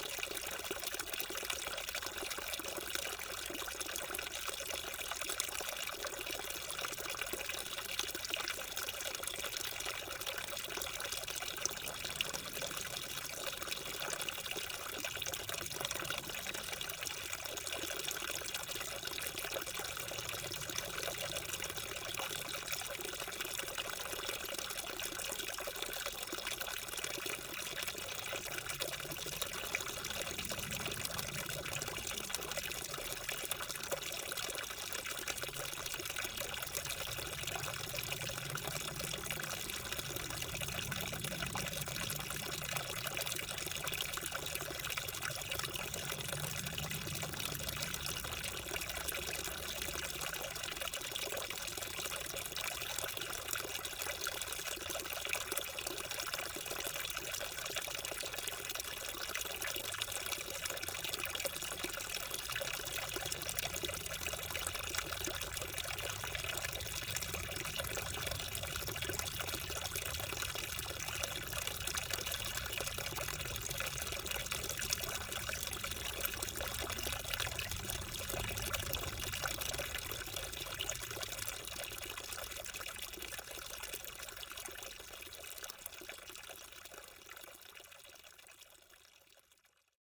Oud-Heverlee, Belgium - Spring
A very small spring located into the beautiful forest called Meerdaalbos.